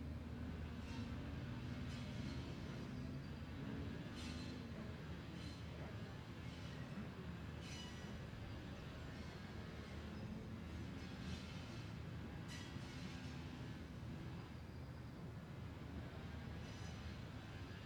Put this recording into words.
british superbikes 2004 ... 125s qualifying one ... one point stereo mic to minidisk ...